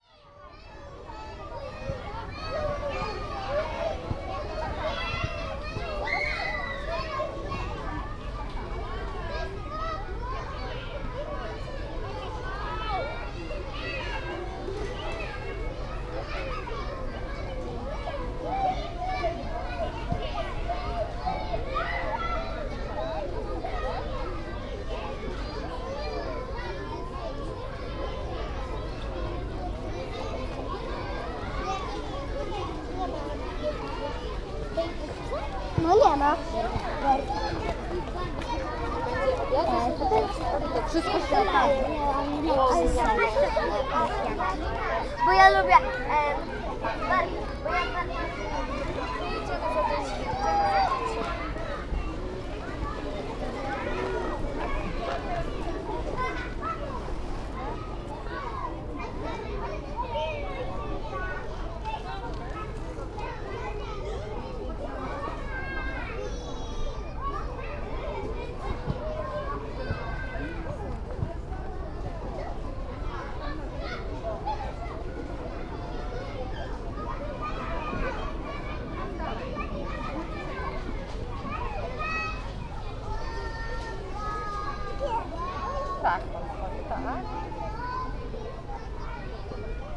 Poznan, Piatkowo, Sobieskiego housing complex - kindergarten
kids going crazy on a kindergarten playground. (roland r-07)